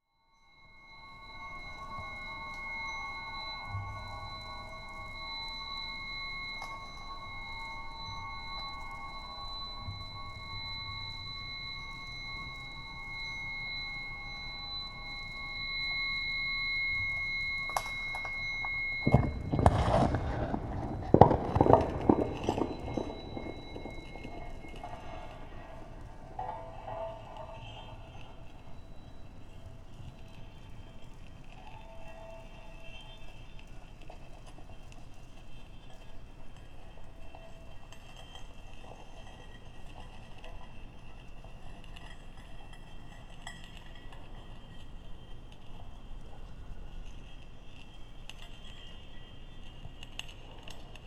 Seaplane Hangar Tallinn, Raviv installation tests
and testing a special sound installation in the hangar
Tallinn, Estonia, 27 May 2010